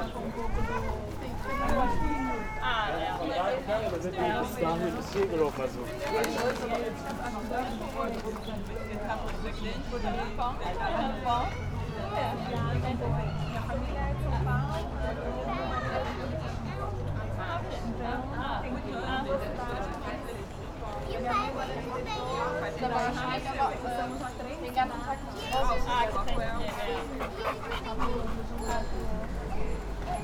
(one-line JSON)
{"title": "Herrfurthstr., Schillerkiez, Berlin - in front of a food store", "date": "2016-05-22 14:20:00", "description": "Berlin, Herrfurthstr., in front of a food store, warm spring day, many people stop here for a sandwich and a drink, many are passing-by from or to Tempelhof park.\n(Sony PCM D50)", "latitude": "52.48", "longitude": "13.42", "altitude": "61", "timezone": "Europe/Berlin"}